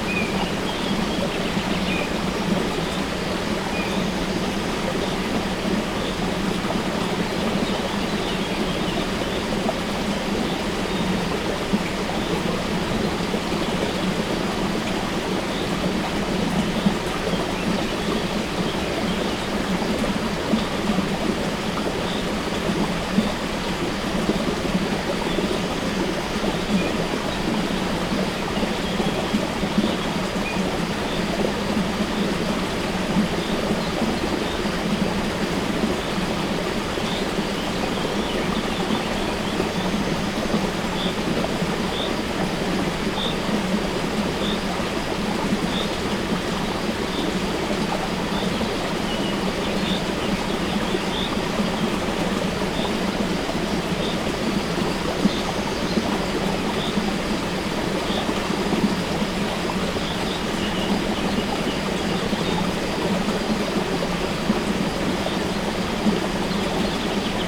Zatolmin, Tolmin, Slovenia - Source of river Tolminka
Water from source of river Tolminka flowing in a stream, birds
Recorded with ZOOM H5 and LOM Uši Pro, Olson Wing array.
Slovenija, 31 May, 09:08